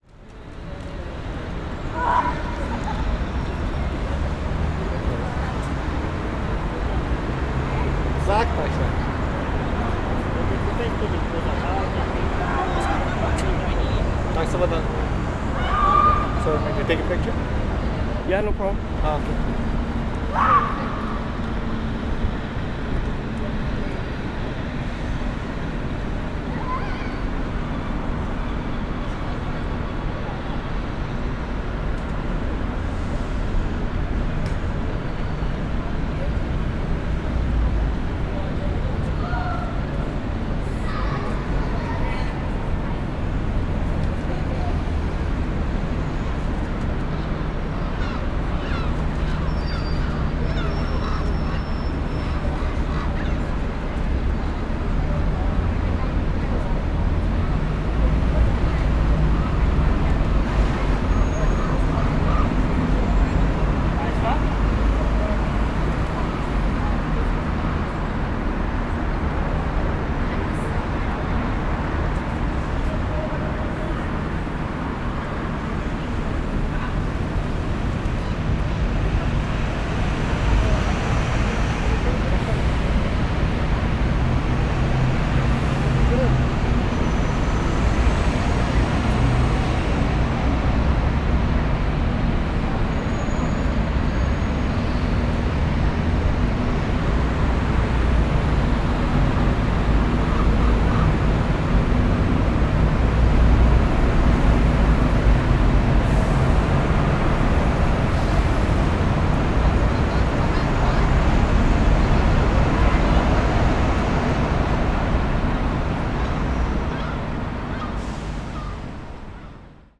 I have been conducting these recordings as an observer however sometimes my kindliness gets the better of me to help someone out. In this situation, I disregarded all the measures I have put in place to protect myself from the spread of Covid-19 and wanted to help a tourist capture his time in front of Belfast City Hall. Only after did I realize what I had done and cleaned my hands and equipment. Naturally, we are beings of interaction and I have not interacted with strangers in close to 5 months, it felt needed to help, to feel human again. It also changes my overall project from not only being observation but interactive audio soundscape journalism.
Northern Ireland, United Kingdom, 4 July 2020, 16:30